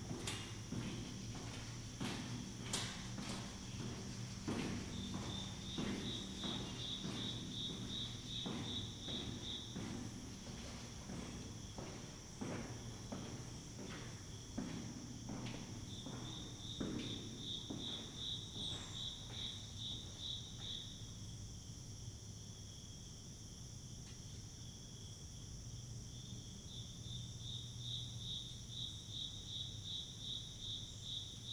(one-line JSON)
{"title": "Tunnel, Ballwin, Missouri, USA - Koridorius", "date": "2020-09-27 09:41:00", "description": "Recording from within a low 90 year old tunnel that passes under train tracks. A cricket marks time like the ticking of a clock. Biophonic and anthrophonic sounds captured internal and external to the corridor. Internal: cricket, footsteps. External: birds, katydids, airplane, voices.", "latitude": "38.54", "longitude": "-90.57", "altitude": "135", "timezone": "America/Chicago"}